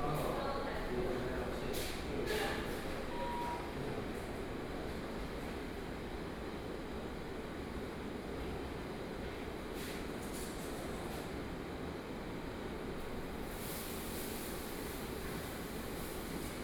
VTartsalon, Taipei - Exhibition layout
Exhibition layout, Construction, Sony PCM D50 + Soundman OKM II
Zhongshan District, Taipei City, Taiwan, 30 July 2013